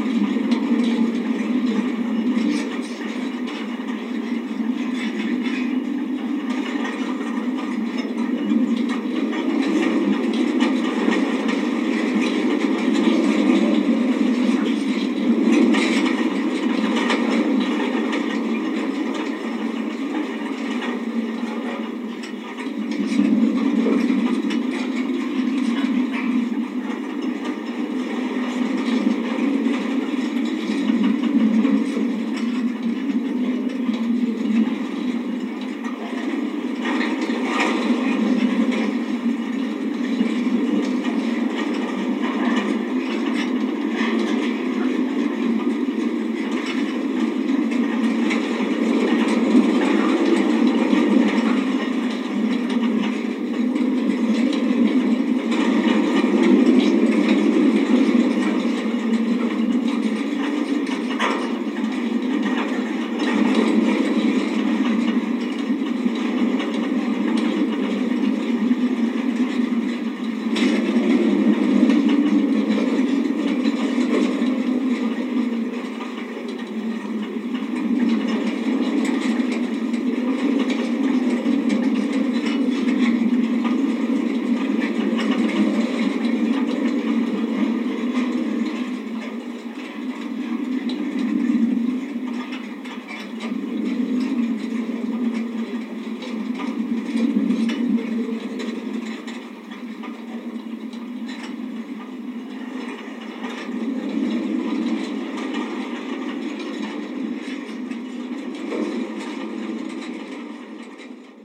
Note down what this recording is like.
Recorded at Llangloffan Fen Nature Reserve using a Zoom H4 & two home made contact mics clipped to the fence. Weather conditions were overcast & humid with a moderate wind & frequent gusts- which, together with surrounding grass, interact with the fence.